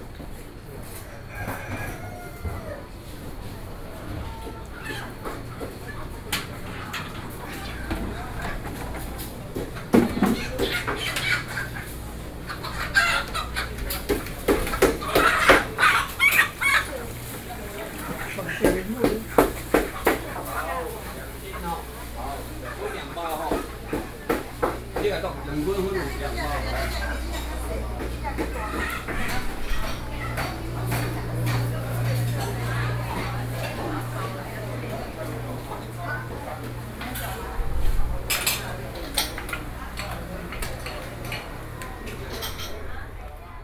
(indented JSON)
{
  "title": "Ln., Sec., Xinyi Rd., Zhongzheng Dist., Taipei City - Traditional markets",
  "date": "2012-11-03 07:54:00",
  "latitude": "25.03",
  "longitude": "121.53",
  "altitude": "16",
  "timezone": "Asia/Taipei"
}